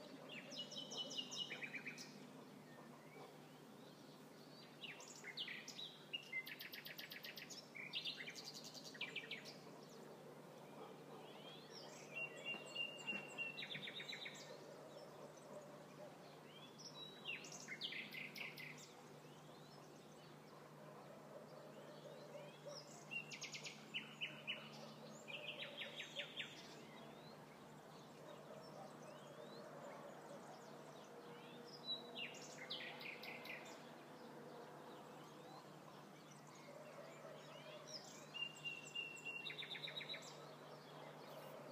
Popoli Provincia di Pescara, Italia - Uccelli